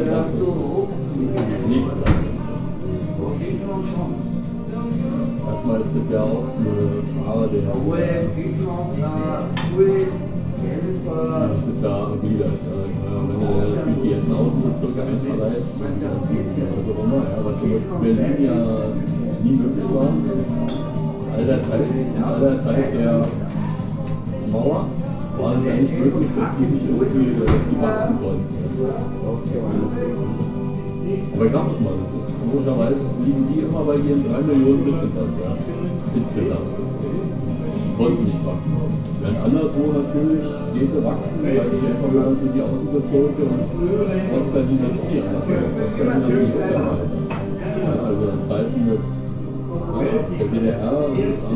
Wels, Österreich - bierbeisl
bierbeisl wels bahnhof
1 February, 22:53